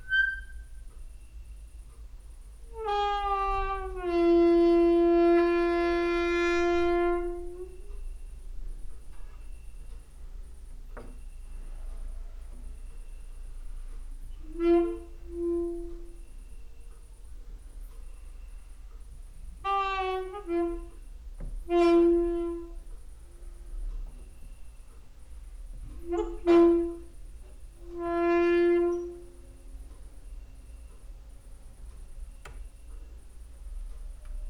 times of "hüzün" and tearing cricket song
Maribor, Slovenia, 3 September 2013